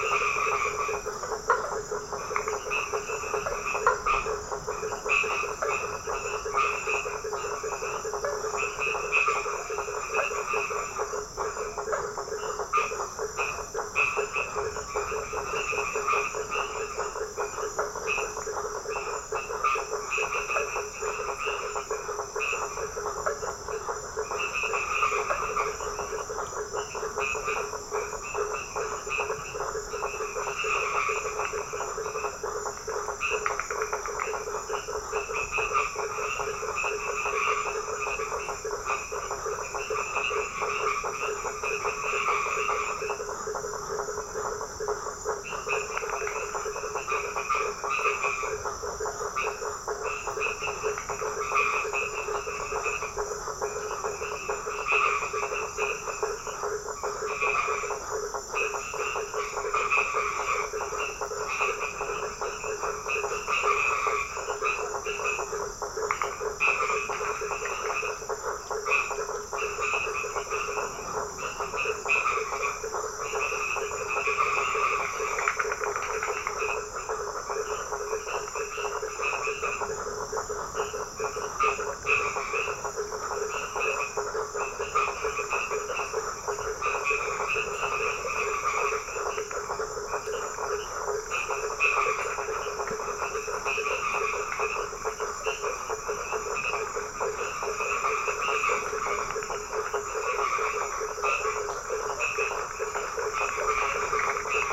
Praia do sono, Brazil - Toads singing during the night in Praia do Sono (Brazil)
Behind the church, in the small village of Praia do Sono, toads are singing. Beach in background very far away...
Recorded by a MS Setup Schoeps CCM41+CCM8 in a Zephyx Windscreen by Cinela
Recorder Sound Devices 633
Sound Reference: BRA170219T10
Paraty - RJ, Brazil, 19 February 2017